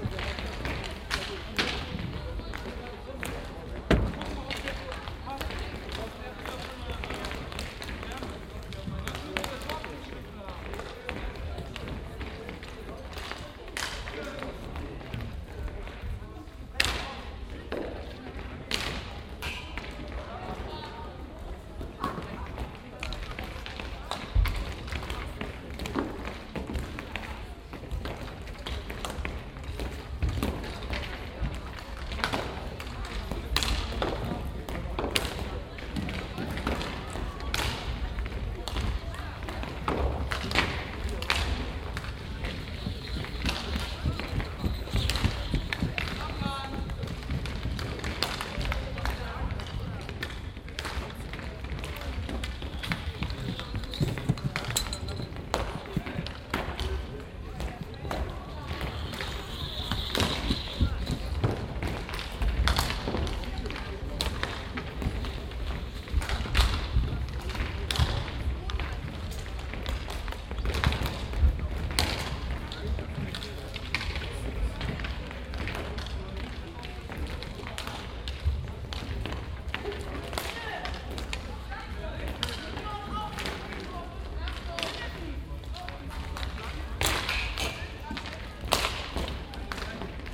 {"title": "monheim, falkenstrasse, sandberhalle, inline skater hockey training - monheim, falkenstrasse, sandberghalle, inline skater hockey warmschiessen", "description": "sporthalle am frühen nachmittag, vorbereitung auf das spiel, inline skater hockey warmschiessen\nsoundmap nrw:\nsocial ambiences, topographic field recordings", "latitude": "51.10", "longitude": "6.90", "altitude": "46", "timezone": "GMT+1"}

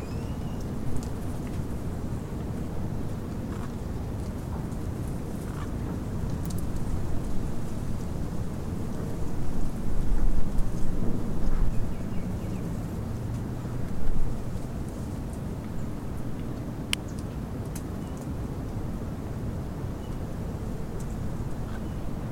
{"title": "Playa Guiones, Costa Rica - AM bird chatter on the path to the beach", "date": "2014-02-26 06:25:00", "latitude": "9.94", "longitude": "-85.67", "timezone": "America/Costa_Rica"}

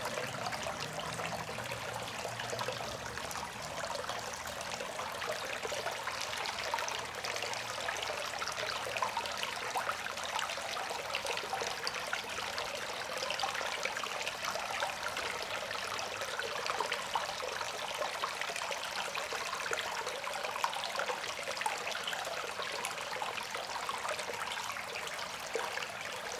{"title": "Frederick Cres, Port Ellen, Isle of Islay, UK - Rain water drain pipe", "date": "2022-05-06 18:30:00", "description": "Sound of a rain water drain pipe on the beach of Port Ellen.\nRecorded with a Sound Devices MixPre-6 mkII and a pair of stereo LOM Uši Pro.", "latitude": "55.63", "longitude": "-6.18", "altitude": "5", "timezone": "Europe/London"}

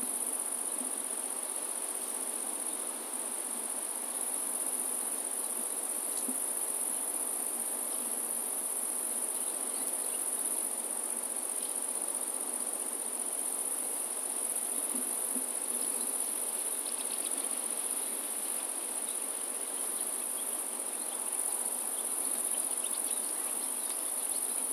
{"title": "Neringos sav., Lithuania - Forest near hotels", "date": "2016-07-26 18:56:00", "description": "Recordist: Saso Puckovski\nDescription: In the forest close to some hotels. Insects, birds and people on bikes. Recorded with ZOOM H2N Handy Recorder.", "latitude": "55.31", "longitude": "21.00", "altitude": "28", "timezone": "Europe/Vilnius"}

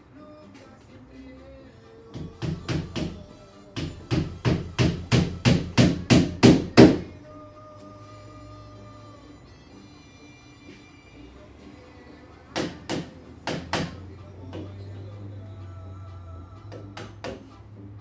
{"title": "Potrero Hill, San Francisco, CA, USA - world listening day 2013", "date": "2013-07-18 10:30:00", "description": "my contribution to the world listening day 2013", "latitude": "37.76", "longitude": "-122.40", "altitude": "85", "timezone": "America/Los_Angeles"}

